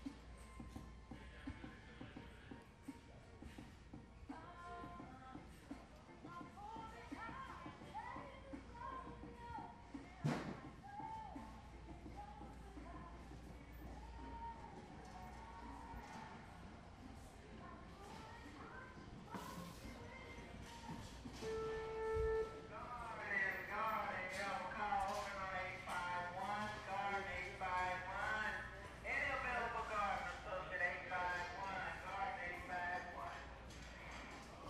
The Home Depot Emeryville 2.
The Home Depot Emeryville
Emeryville, CA, USA, November 2010